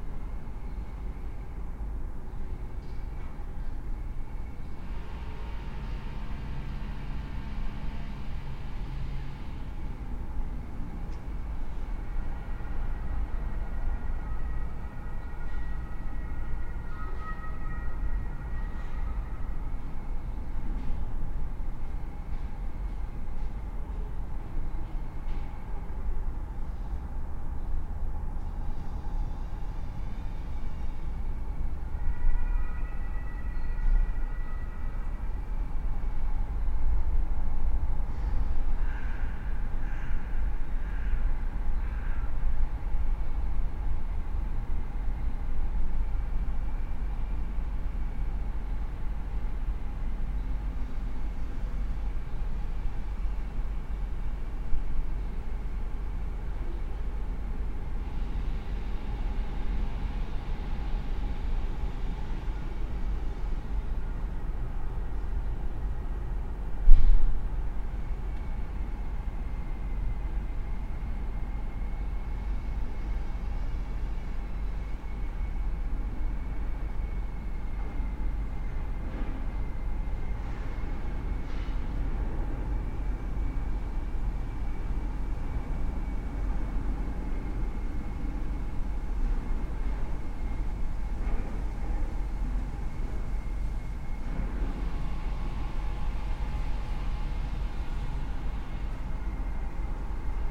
Berlin Bürknerstr., backyard window - winter morning in a Berlin backyard
(Raspberry PI, ZeroCodec, Primo EM172)